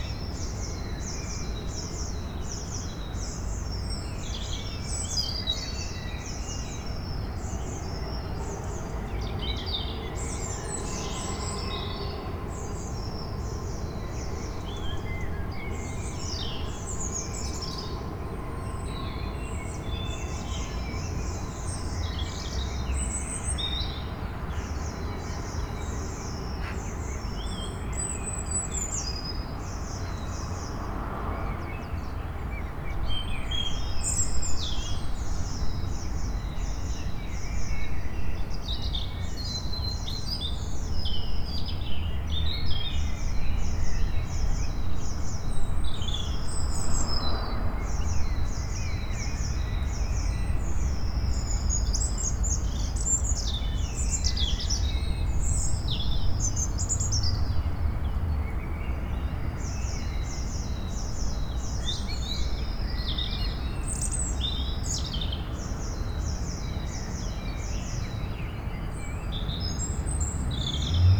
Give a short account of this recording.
Early morning city noise. Bird songs and distant tramway. Bruit de fond citadin au petit matin. Chants d’oiseaux et tramway lointain.